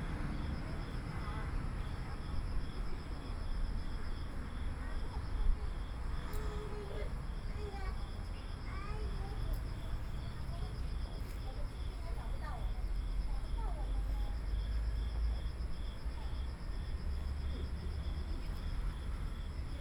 268台灣宜蘭縣五結鄉二結村 - in front of the station
In front of the Station, Small village, Birds, Traffic Sound, Trains traveling through
Sony PCM D50+ Soundman OKM II